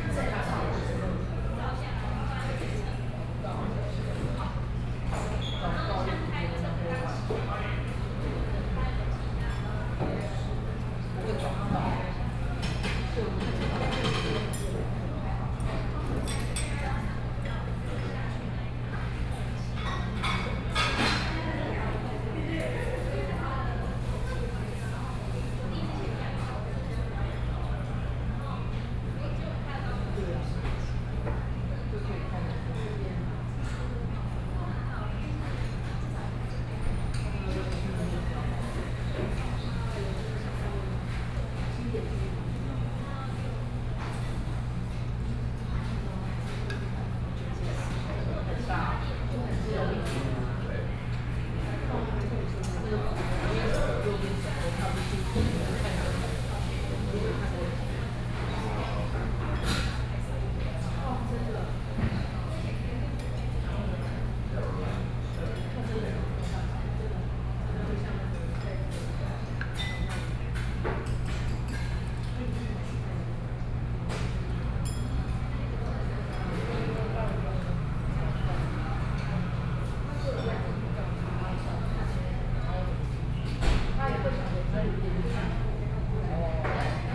Taipei - In the restaurant
In the restaurant, Sony PCM D50 + Soundman OKM II
Taipei City, Taiwan, August 2013